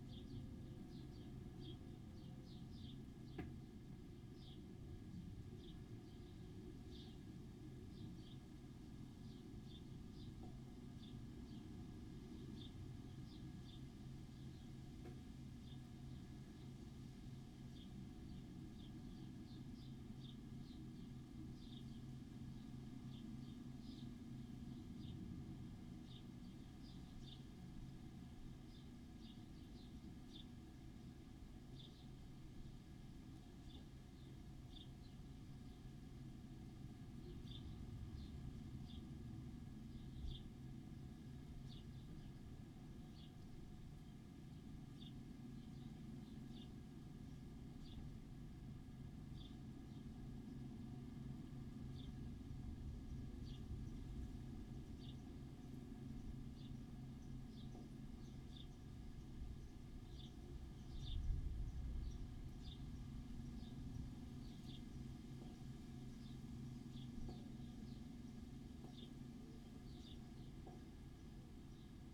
Luttons, UK - distant combine harvesters ... distant thunderstorm ...
distant combine harvesters ... distant thunderstorm ... lavalier mics in a half filled mop bucket ... bird calls from ... house sparrow ... collared dove ... flock of starlings arrive in an adjacent hedge at the end ...
Helperthorpe, Malton, UK, 6 August 2019, 17:25